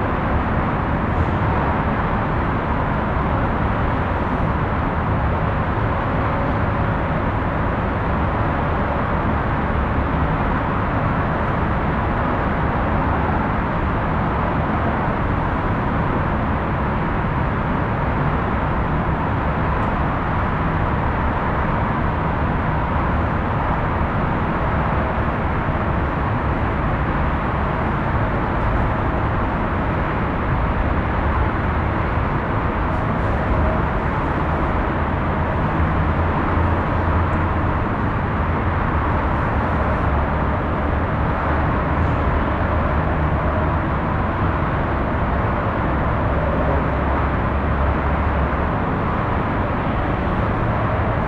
Rheinpark Bilk, Düsseldorf, Deutschland - Düsseldorf, Rheinturm, open platform
At the open, outdoor platform of the tower. A more long recording of the dronelike sound of the city traffic. Also some doors banging at the platform entrance.
This recording is part of the exhibition project - sonic states
soundmap nrw - topographic field recordings, social ambiences and art places